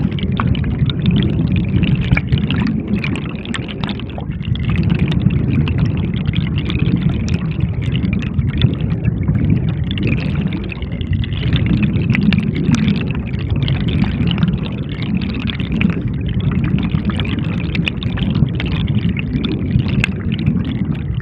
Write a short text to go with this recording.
A mono recording with a single piezo hydrophone in a torrent of rushing water after heavy rain. This is typical of the warped audio image from piezo elements unless they are bonded to a much larger resonator. The recorder was a Mix Pre 3.